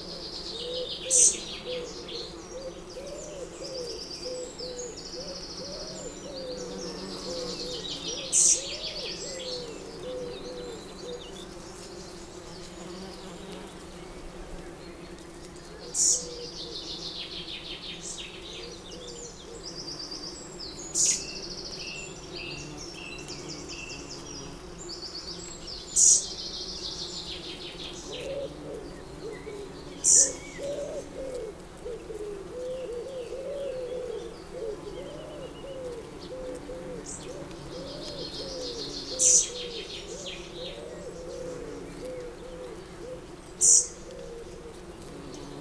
Green Ln, Malton, UK - lime tree buzzing ...
Lime tree buzzing ... bees ... hoverflies ... wasps ... etc ... visiting blossom on the tree ... open lavalier mics on T bar on telescopic landing net handle ... bird song and calls from ... wren ... blackbird ... chaffinch ... whitethroat ... blue tit ... fledgling song thrush being brought food by adult birds ... particularly after 18 mins ... some background noise ...